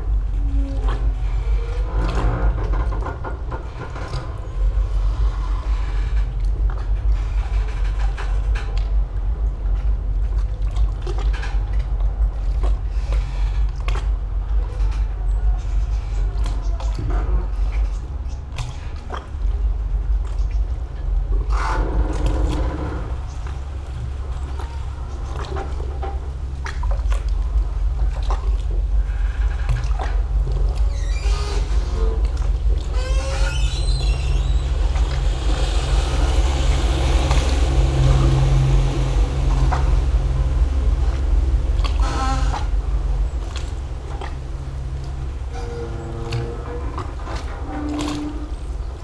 berlin: eichenstraße - the city, the country & me: squeaking boat
squeaking ship, waves lapping against the quay wall, passing motorboats
the city, the country & me: october 5, 2014